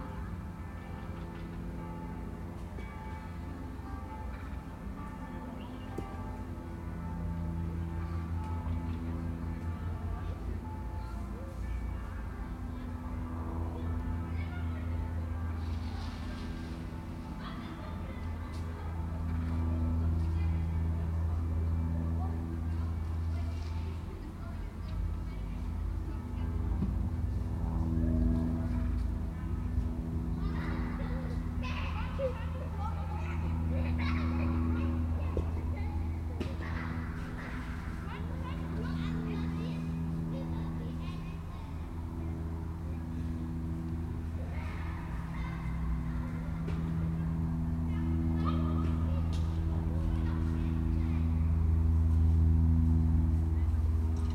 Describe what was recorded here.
This lovely green courtyard, with shady trees is typical of Nazi era (late 1930s) housing in this area. The surrounding buildings protect the interior from outside sounds, especially the autobahn roar, creating a quiet and peaceful atmosphere where children play and long lines are provided for hanging out washing. Unusually this one has been left more or less intact with no space yet taken for parking cars. Bells and voices reverberate gently when they occur. Crow calls may echo two or three times.